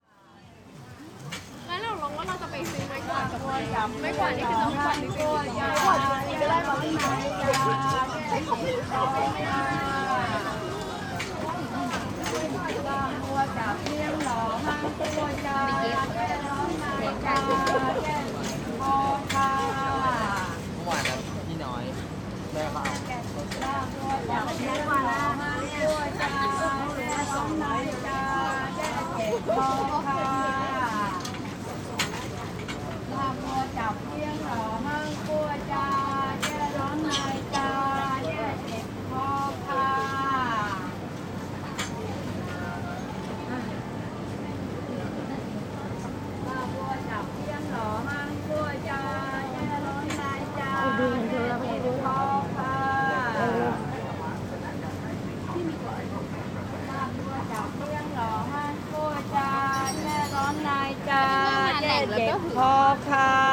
Chakkrawat, Samphan Thawong, Bangkok, Thailand - drone log 10/03/2013
Sanpeng Lane, streetnarket
(zoom h2, build in mic)